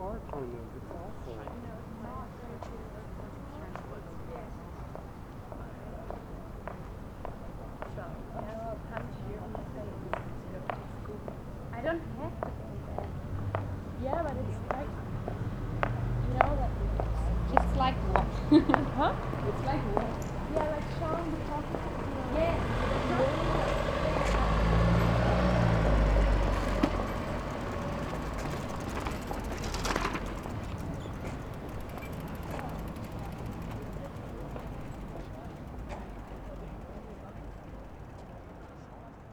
Berlin: Vermessungspunkt Friedelstraße / Maybachufer - Klangvermessung Kreuzkölln ::: 02.07.2010 ::: 01:51